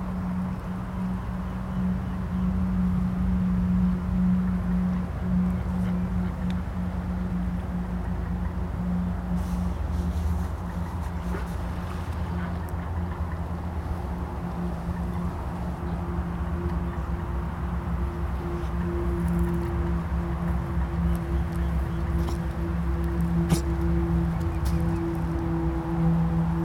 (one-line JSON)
{"title": "Limerick City, Co. Limerick, Ireland - by the wetlands observation platform", "date": "2014-07-18 14:32:00", "description": "birds, dogs, people, some traffic noise in the background. Aircraft passing.", "latitude": "52.66", "longitude": "-8.65", "altitude": "1", "timezone": "Europe/Dublin"}